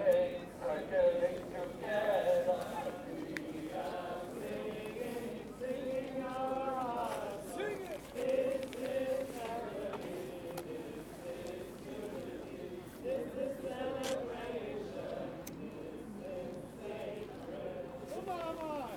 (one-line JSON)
{"title": "Occupy Vienna, Heldenplatz", "date": "2011-10-15 12:30:00", "description": "100 people singing together on Heldenplatz.", "latitude": "48.21", "longitude": "16.36", "altitude": "177", "timezone": "Europe/Vienna"}